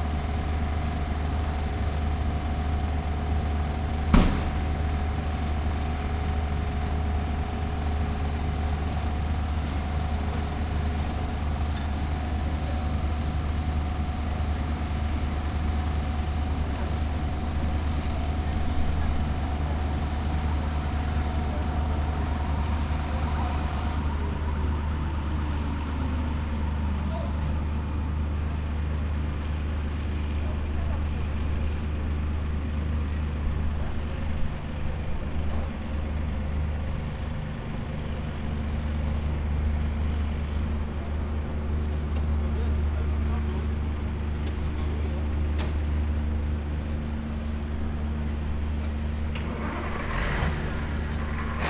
Poznan, Fredry Str. near one of many university buildings - road works at Fredry
a few construction workers squads operating their drills, pneumatic hammers and other pressurized tools, fixing the pavement
Poznań, Poland, 18 July 2012